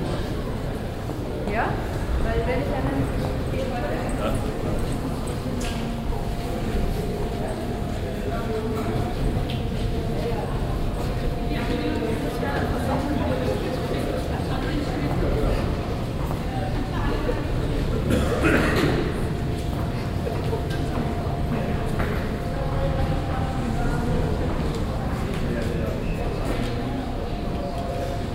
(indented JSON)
{
  "title": "cologne - bonn, airport, ankunft b",
  "date": "2008-06-05 15:39:00",
  "description": "soundmap: köln/ nrw\natmo im ankunfsbereichb des koeln - bonner flughafens, abends\nproject: social ambiences/ listen to the people - in & outdoor nearfield recordings - listen to the people",
  "latitude": "50.88",
  "longitude": "7.12",
  "altitude": "74",
  "timezone": "Europe/Berlin"
}